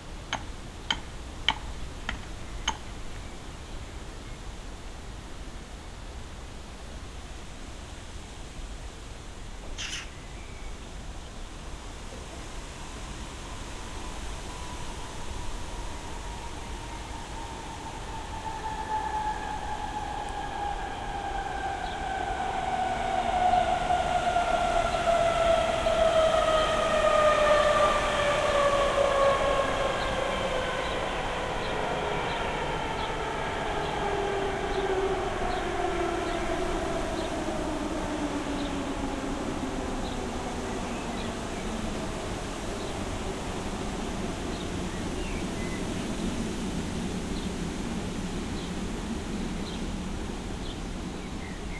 allotment, Treptow, Berlin - garden ambience
lovely garden plot, this spot is directly affected by the planned motorway, the two houses at the end of nearby Beermannstr. will disappear too.
Sonic exploration of areas affected by the planned federal motorway A100, Berlin.
(SD702, Audio technica BP4025)
Deutschland, European Union, 17 May 2013